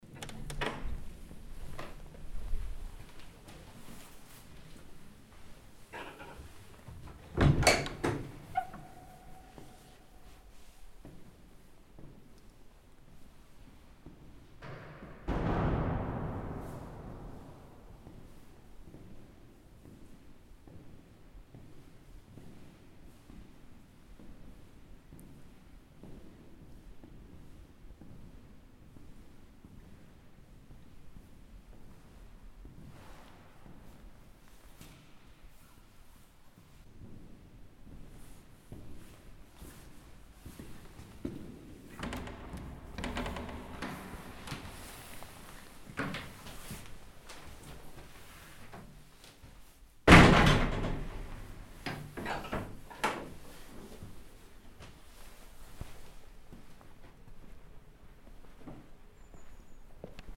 Caviano, Tessin, Schweiz, Kirche, Kirchenschiff, Raumklang, Kirchenportal